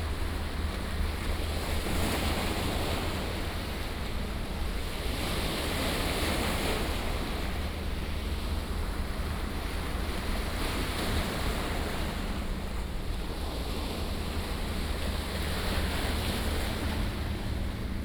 On the banks of the river, There are yachts on the river, River water impact on the river bank
5 January 2017, ~17:00